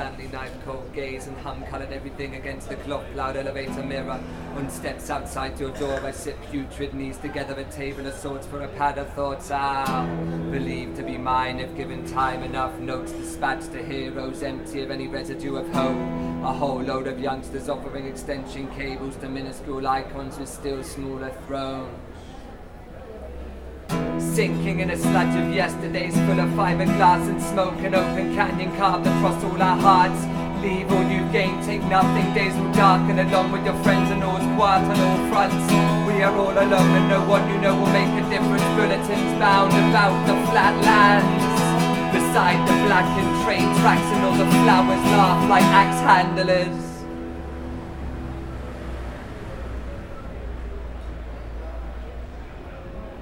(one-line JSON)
{"title": "Rue Vian", "date": "2011-10-21 11:58:00", "description": "A Band of Buriers / Happening N°1 / part 3", "latitude": "43.29", "longitude": "5.38", "altitude": "47", "timezone": "Europe/Paris"}